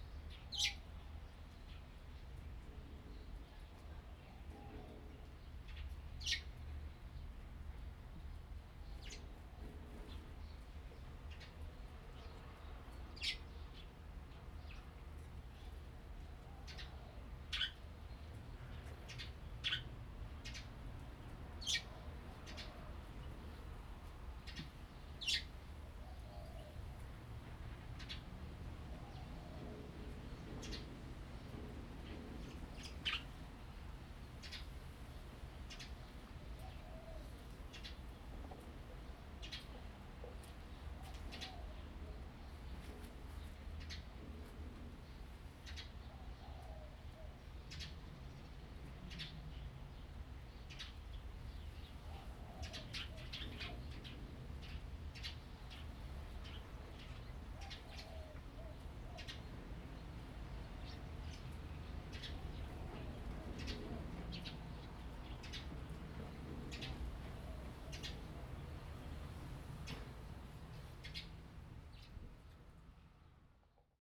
Birds singing, In front of the temple
Zoom H2n MS+XY
金門縣 (Kinmen), 福建省, Mainland - Taiwan Border